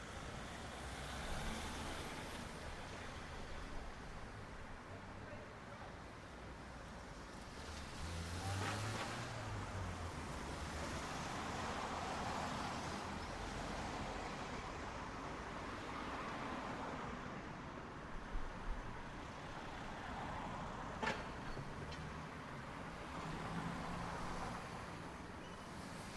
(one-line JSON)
{"title": "110 Thomas Street, Dublin 8", "date": "2010-07-18 16:00:00", "description": "Sunday afternoon, recorded from the window of my flat.", "latitude": "53.34", "longitude": "-6.28", "altitude": "20", "timezone": "Europe/Dublin"}